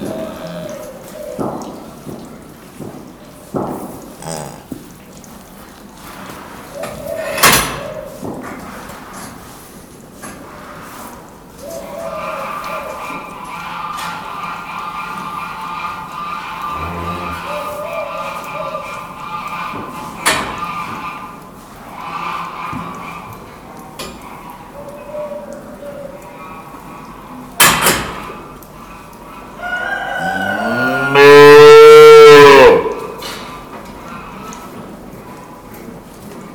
Via 1° Maggio, Bernate VA, Italia - Fattoria con oche e piccioni e mucche
Bernate VA, Italy, 11 December 2018, 14:56